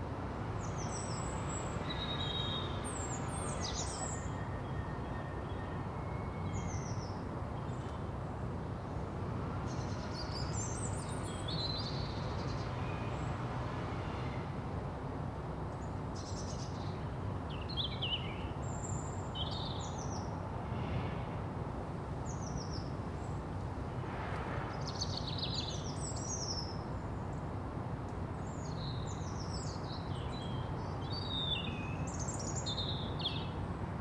Contención Island Day 22 inner northwest - Walking to the sounds of Contención Island Day 22 Tuesday January 26th
The Poplars High Street Causey Street Linden Road
Cars bikes
and groups of schoolchildren pass
Rooftop woodpigeons chase
nod/bow
and tip in early courtship moves
Stained glass porch windows
soft blues and greys
A fan of dead ivy still clings
above the door of a front wall
North East England, England, United Kingdom, 26 January 2021